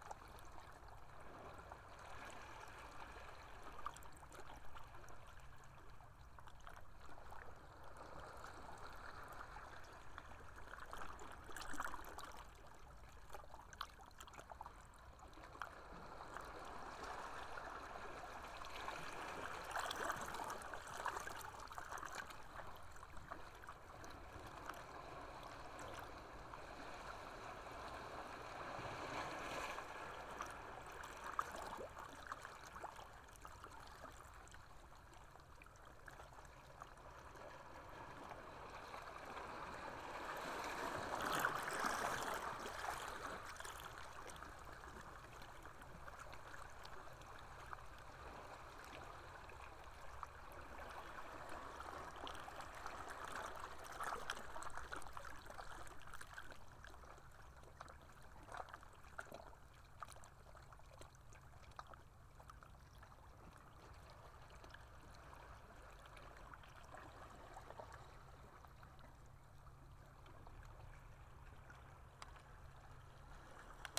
Minnehaha Avenue, Takapuna, Auckland, New Zealand - Waves and lava log hollows
Waves hitting the hollows in lava, where tree logs once were
August 2020